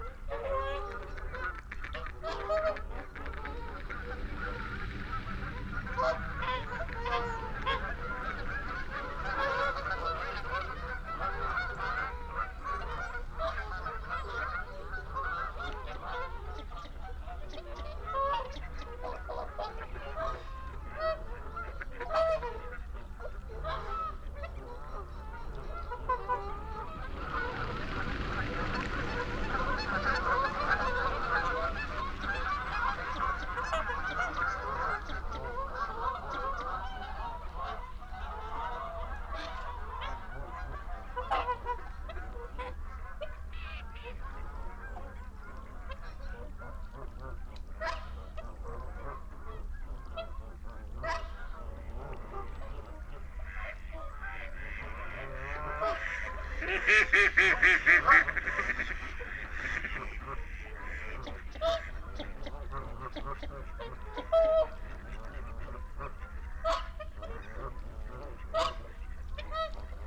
Dumfries, UK - barnacle geese flyover ...
barnacle geese flyover ... xlr sass to zoom h5 ... bird calls ... mallard ... canada ... wigeon ... whooper swan ... shoveler ... wigeon ... carrion crow ... blackbird ... time edited extended unattended recording ...